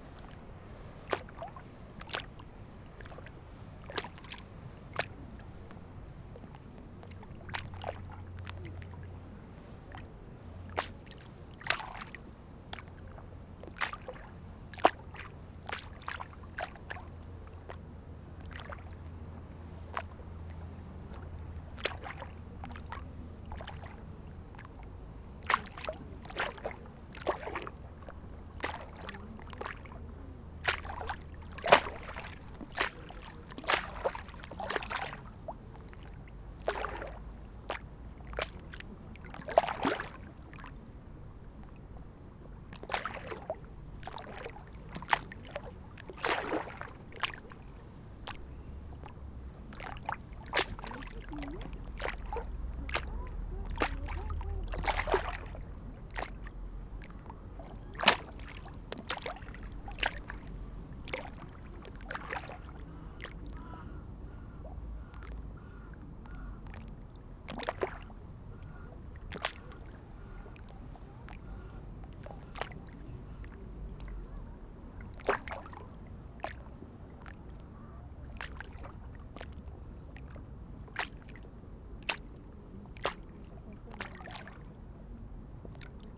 Rixensart, Belgique - Waves on the lake
There's wind on this sunday afternoon. On the Genval lake, small waves crash on the border.